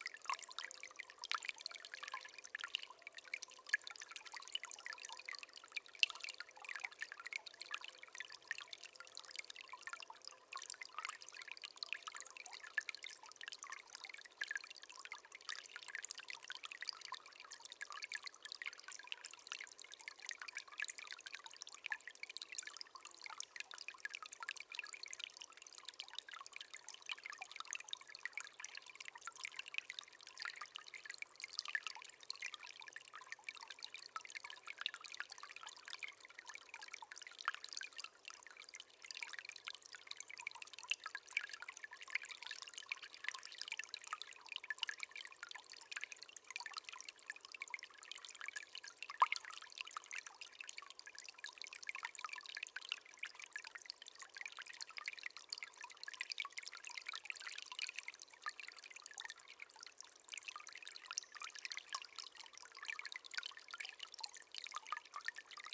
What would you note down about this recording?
close-up recording of little streamlet